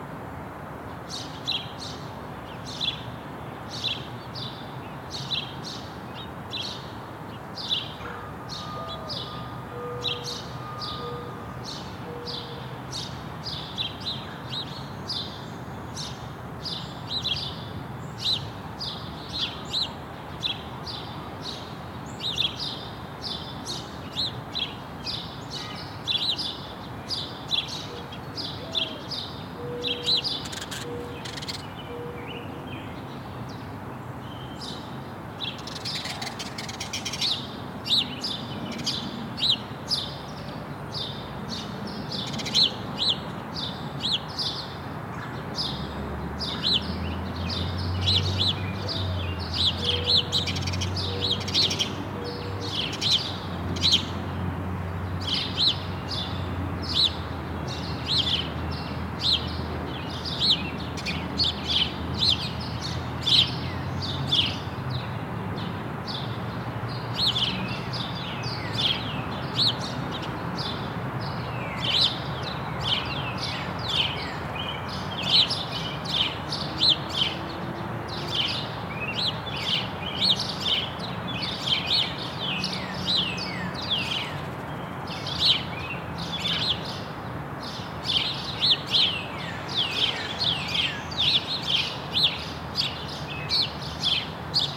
New York, NY, USA - The Seuffert Bandshell
The sound of birds recorded in front of the Seuffert Bandshell - a curved surface designed to reflect sound outwards in one direction.